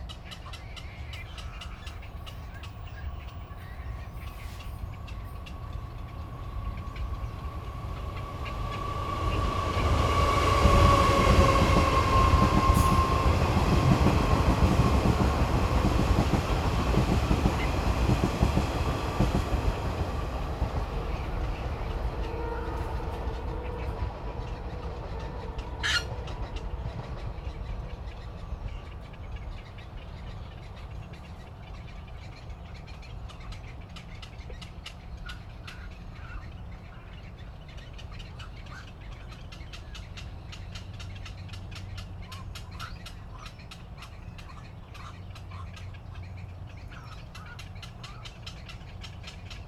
羅東林業文化園區, Luodong Township - Birdsong
Birdsong, Trains traveling through, Traffic Sound
Zoom H6 MS+ Rode NT4